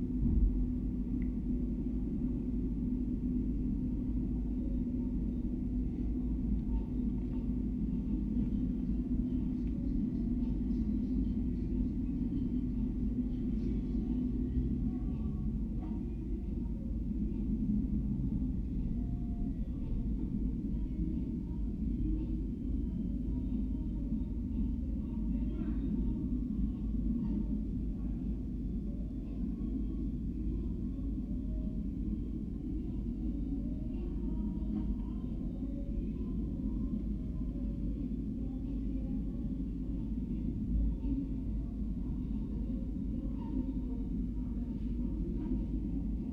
El Raval, Barcelona, Spain - atelier resonance
moving around room with telinga stereo mic. Bass resonances of the ambient noise around.
This is a sound from the Raw Materials performance and video: part of
España, European Union